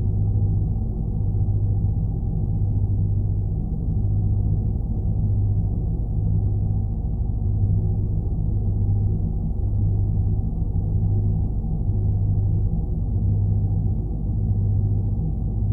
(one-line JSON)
{"title": "Nørgårdvej, Struer, Danmark - Drone sound", "date": "2022-09-27 15:20:00", "description": "Drone sound recorded with Lome Geofone, placed outside on a large contanier (placed on the beach) with an activ pump inside. Øivind Weingaarde.", "latitude": "56.48", "longitude": "8.61", "altitude": "1", "timezone": "Europe/Copenhagen"}